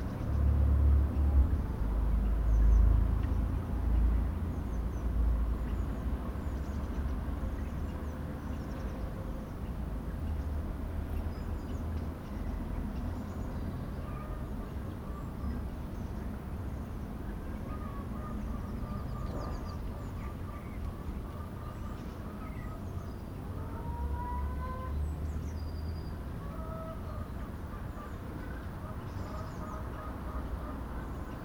Im Weedengarten, Battenberg (Pfalz), Deutschland - Hotel Hofgut Battenberg. Morning sounds in the garden
Morning sounds recorded from the windowsill on the 2nd floor facing the garden and wood behind the building.
Rheinland-Pfalz, Deutschland, 13 September 2021